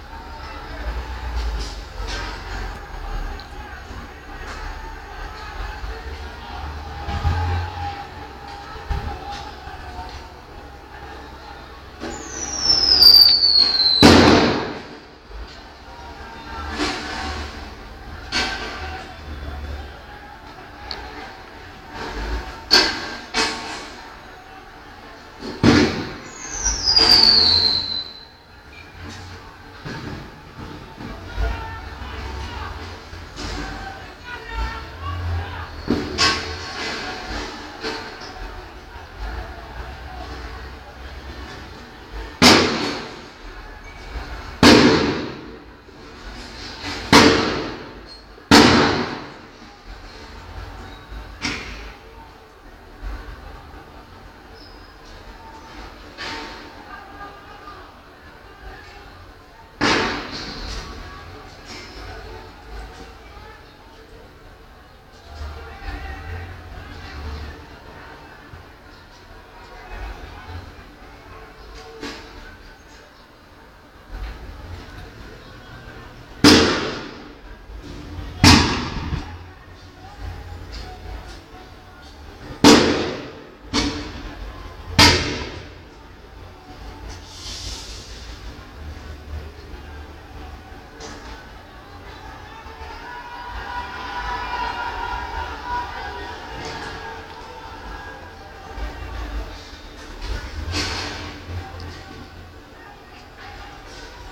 Egyptian Clashes
After the friday 28 muslim prayer people started to protest against the 30 years regime. Here the clashes between people and police.
El Lban, Alexandria, Egypt